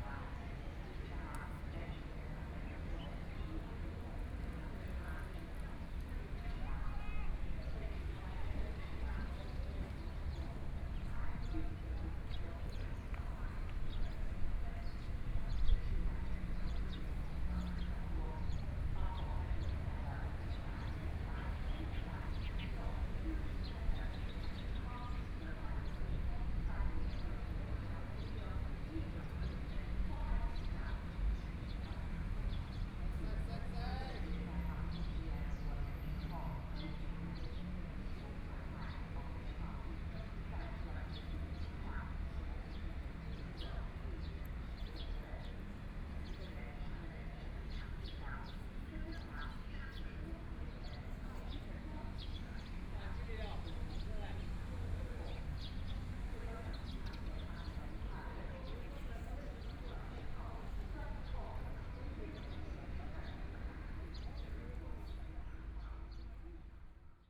左營區埤東里, Kaohsiung City - Sitting in front of the square
Sitting in front of the square, Birdsong sound, Hot weather, Tourist area, Traffic Sound
Kaohsiung City, Taiwan, 15 May, 11:16